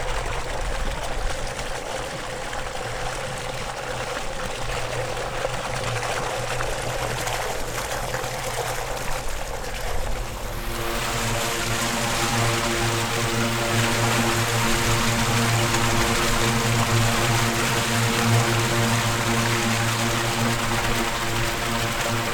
Unnamed Road, Czechia - waterstream winter
Sedimentation lakes of Počerady Power plant.
12 January, ~13:00